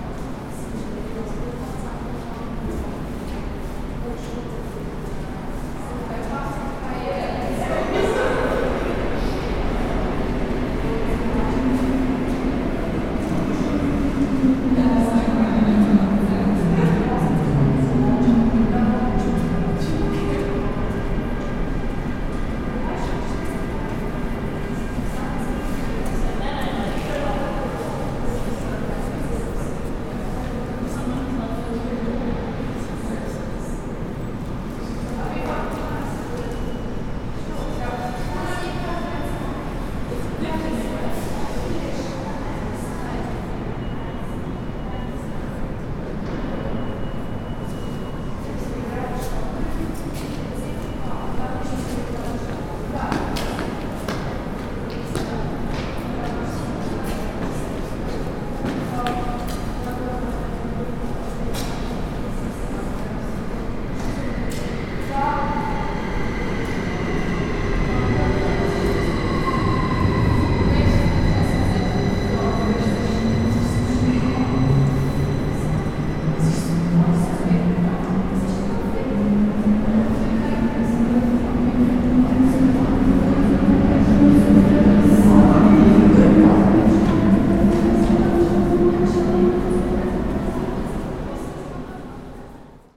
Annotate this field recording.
Bahnhof Hardbrücke, Zürich, Halle